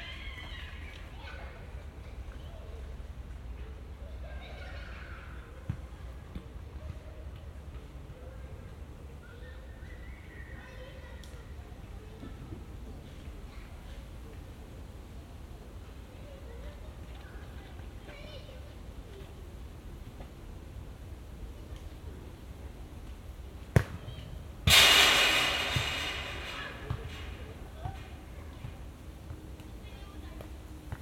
Boy is kicking the ball on the playground, children are screaming...
Recorded with Zoom H2n and Roland CS-10EM stereo microphone